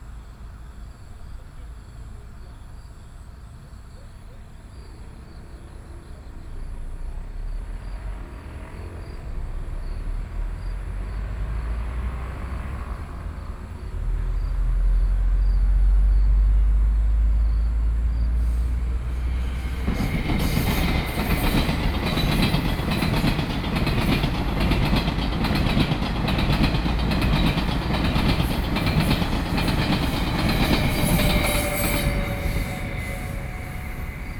五結鄉二結村, Yilan County - Traffic Sound
In front of the Rail, Birds, Traffic Sound, Trains traveling through
Sony PCM D50+ Soundman OKM II
Yilan County, Taiwan